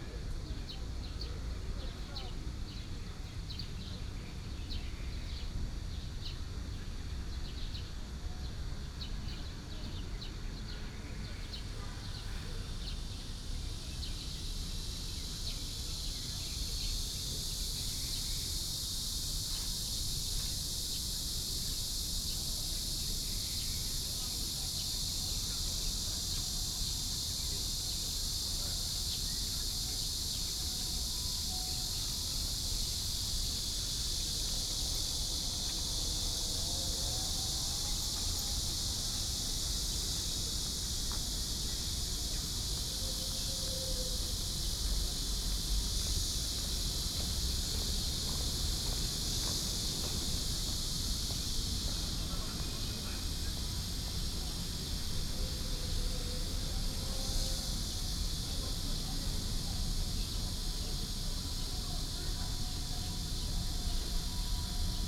中山公園, Yilan City - in the Park
Sitting in the Park, Very hot weather, Traffic Sound, Birdsong, Cicadas sound
Sony PCM D50+ Soundman OKM II